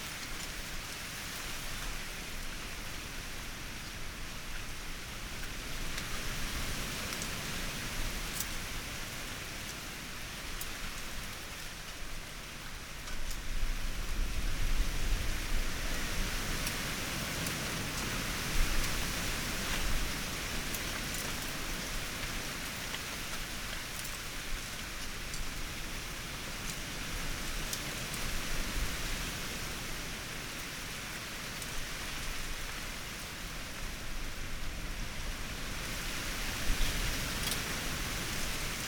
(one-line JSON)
{"title": "Patmos, Vagia, Griechenland - Schilf im Wind", "date": "2003-05-11 14:41:00", "description": "Das Schilf wurde ende 2016 zerstört.\nMai 2003", "latitude": "37.35", "longitude": "26.57", "altitude": "3", "timezone": "Europe/Athens"}